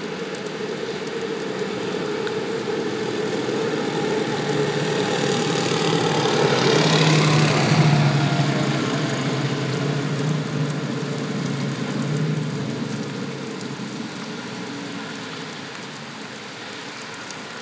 Wait/Listen #71 (13.05.2014/14:01/Viandener Straße/Sinspelt/Germany)
Sinspelt, Germany, 13 May 2014